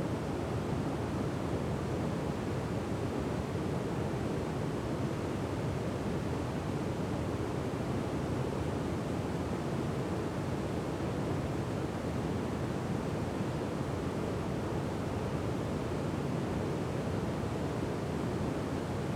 Willow River State Park - Top of Dam
Recorded from the top of the new dam at Willow River State Park
Wisconsin, United States, 23 March, ~13:00